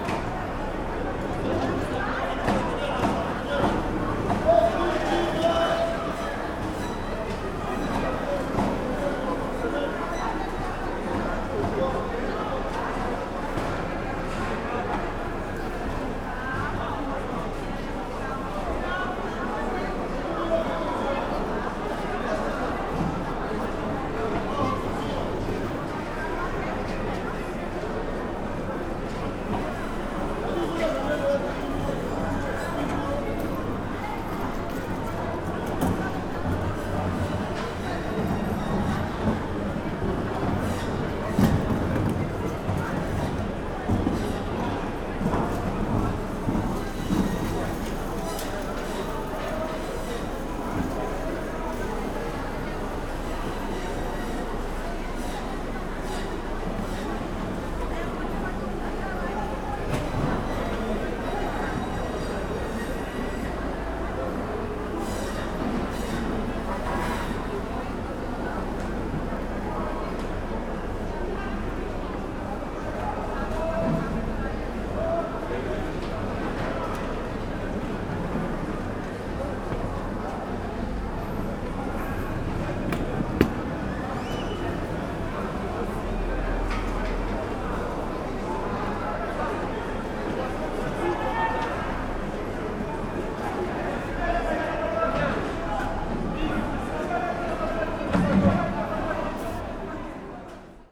Market atmosphere, indoor hall
Ambiance de marché, dans une halle
Juvisy-sur-Orge, France, October 2017